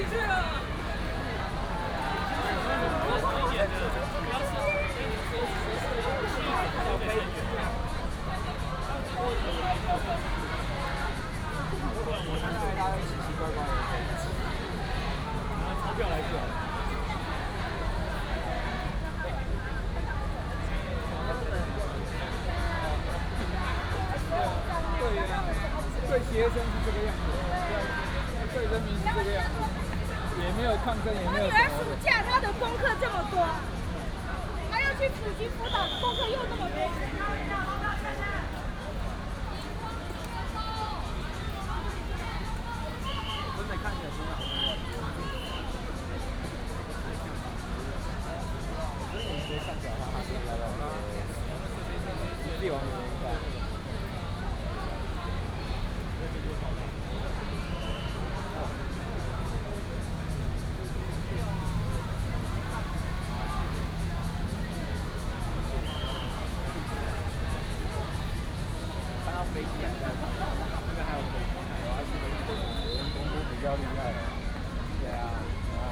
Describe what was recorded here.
Protest march, High school students in front of the Ministry of Education to protest the government illegal